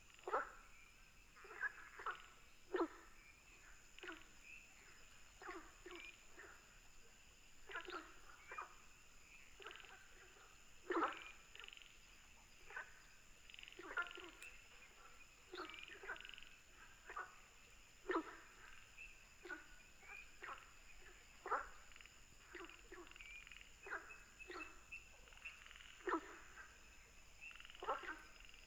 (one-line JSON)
{"title": "三角崙, 魚池鄉五城村, Taiwan - Ecological pool", "date": "2016-04-19 18:53:00", "description": "Frogs chirping, Ecological pool, Firefly habitat", "latitude": "23.93", "longitude": "120.90", "altitude": "756", "timezone": "Asia/Taipei"}